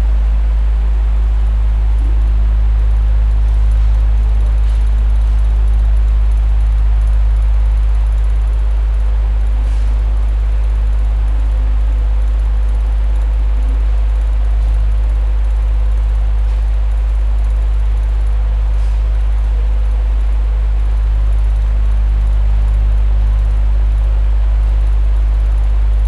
Berlin, C/O Photogallery - floor fan
recorded with mics close to the blades of a floor fan in one of the exhibitions rooms
Berlin, Germany